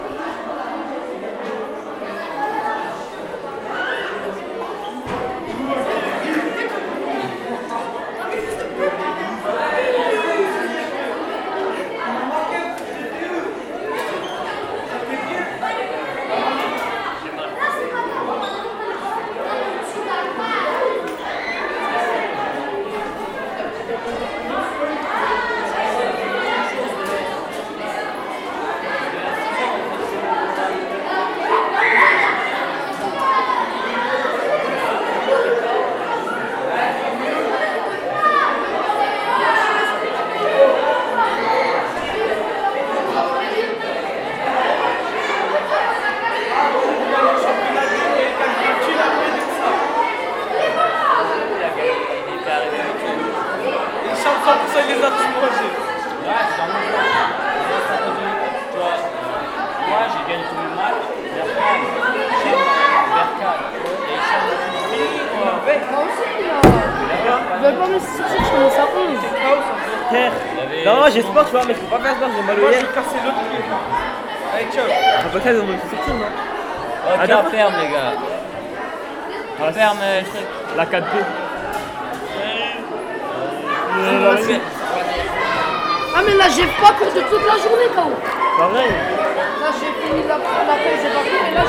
Coll!ège Twinger, rue Ovide, Strasbourg, France - School break in the Hall Collège Twinger, Strasbourg France
Recording of the morning school break in collège Twinger, Strasbourg, FRANCE.
Recorded with ZOOM H2 by the student.
LATI Program 2017